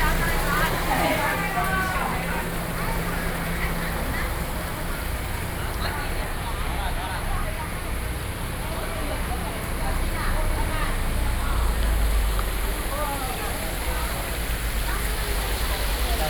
富基漁港, New Taipei City - fish market